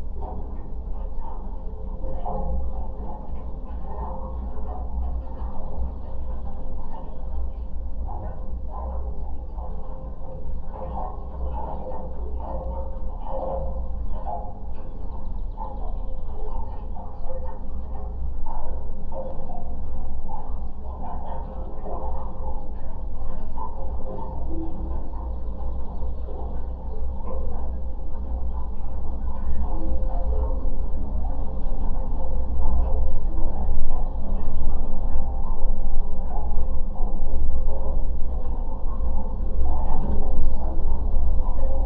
Pakalniai, Lithuania, abandoned bridge
abandoned metallic bridge construction on the lake: it surely remembers soviet times when there was recreation base...a pair of contact mics and geophone on it.
Utenos rajono savivaldybė, Utenos apskritis, Lietuva, June 2020